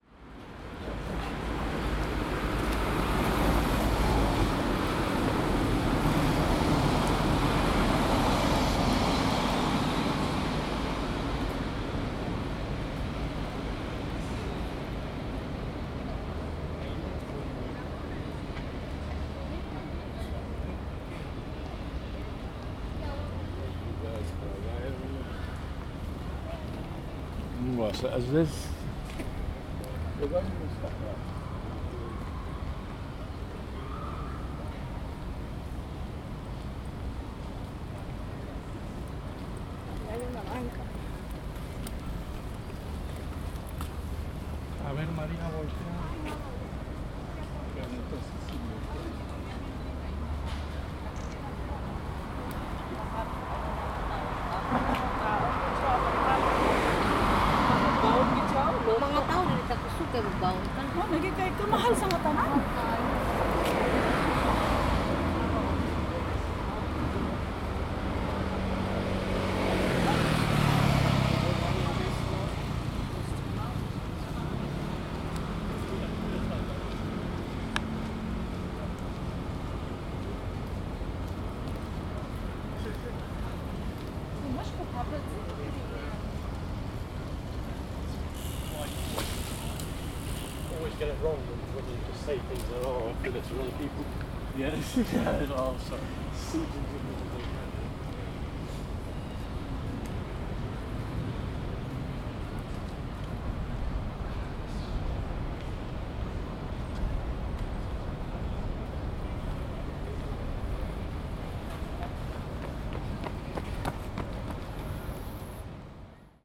Birdcage Walk, London. - Birdcage Walk, London
Tourists, occasional traffic and joggers. Recorded on a Zoom H2n.